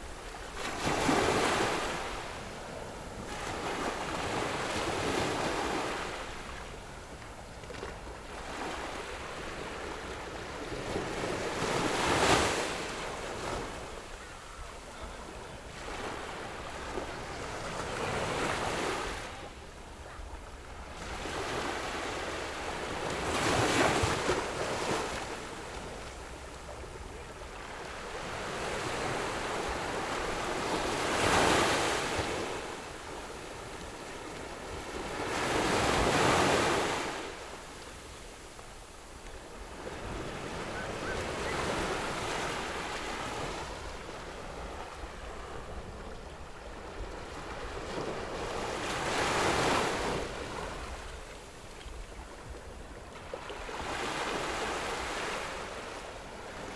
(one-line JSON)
{"title": "磯崎村, Fengbin Township - Sound of the waves", "date": "2014-08-28 16:52:00", "description": "sound of water streams, The weather is very hot\nZoom H6 MS+ Rode NT4", "latitude": "23.70", "longitude": "121.55", "timezone": "Asia/Taipei"}